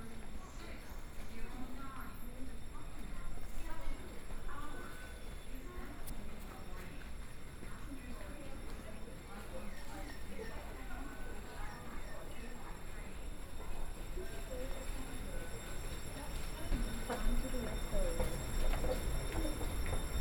Toward the platform, Train passes, Train arrived
Station broadcast messages, Zoom H4n+ Soundman OKM II
台北市 (Taipei City), 中華民國, 8 October 2013